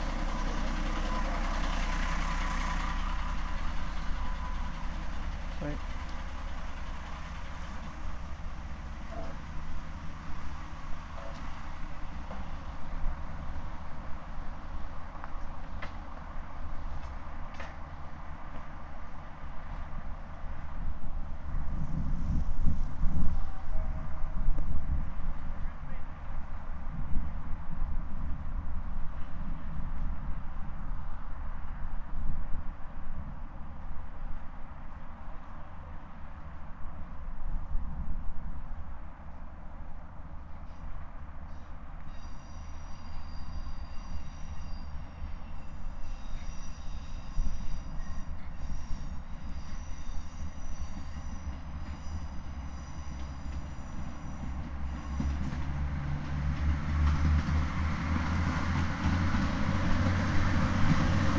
Lagan Footpath - soundwalk near the Lagan
Soundwalk near the lagan path.
Use headphones for better reproduction.
Northern Ireland, United Kingdom, European Union, 18 February 2010, 17:33